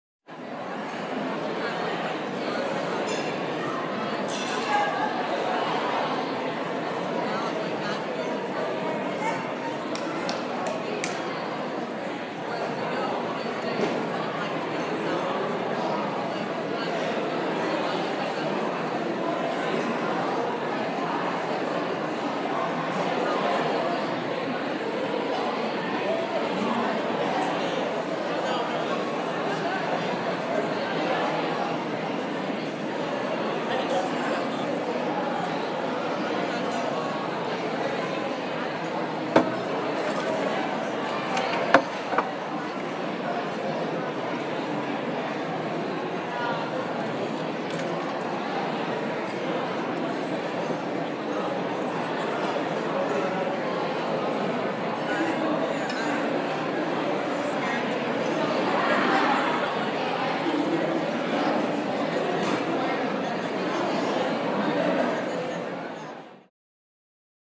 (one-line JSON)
{"title": "Muhlenberg College, West Chew Street, Allentown, PA, USA - Dining hall at 7pm", "date": "2014-12-04 19:00:00", "description": "recording taken in the dining hall at 7pm, the peak time to get dinner", "latitude": "40.60", "longitude": "-75.51", "altitude": "117", "timezone": "America/New_York"}